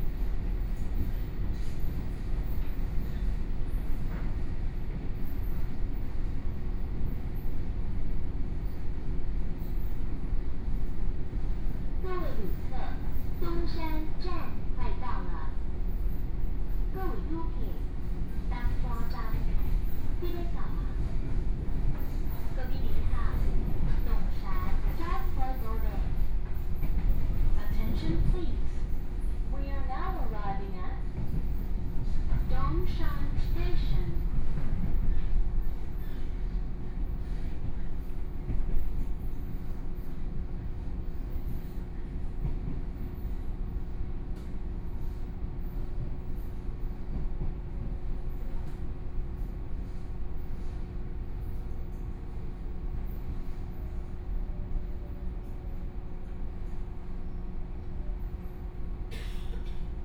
Su'ao Township, Yilan County - Local Train
from Su'aoxin Station to Dongshan Station, Binaural recordings, Zoom H4n+ Soundman OKM II